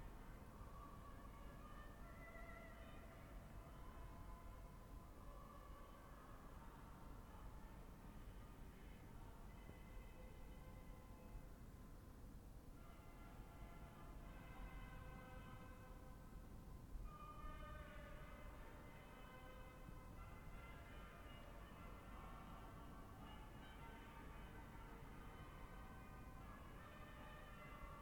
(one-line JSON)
{"title": "Saint Martin, Arlon, België - Saint Martin", "date": "2019-02-11 12:12:00", "description": "Sounds from inside the église Saint Martin", "latitude": "49.68", "longitude": "5.81", "altitude": "410", "timezone": "GMT+1"}